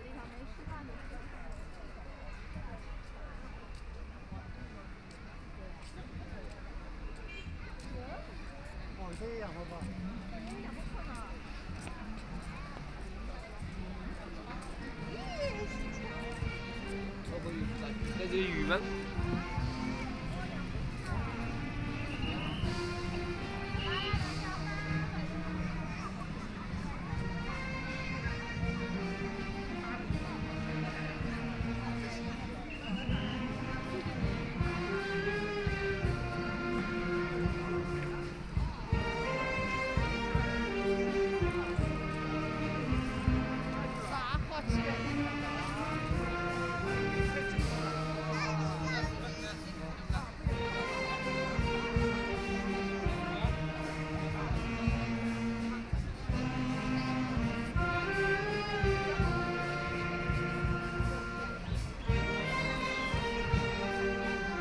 Heping Park, Shanghai - Saxophone performances
Saxophone performances, community groups, Binaural recording, Zoom H6+ Soundman OKM II